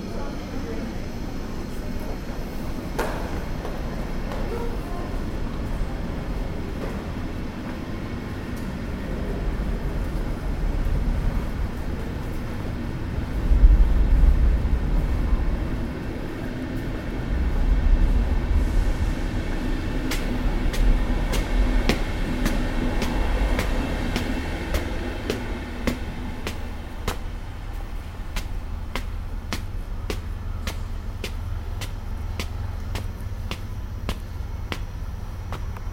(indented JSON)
{
  "title": "Rosenheim, main station, pedestrian underpass",
  "description": "recorded june 7, 2008. - project: \"hasenbrot - a private sound diary\"",
  "latitude": "47.85",
  "longitude": "12.12",
  "altitude": "448",
  "timezone": "GMT+1"
}